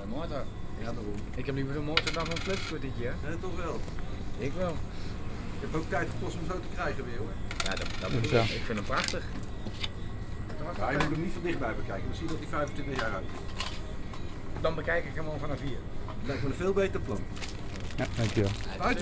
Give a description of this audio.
koelsysteem, broodje kaas kopen, cooling system in the shop of the tankstation bying a roll with cheese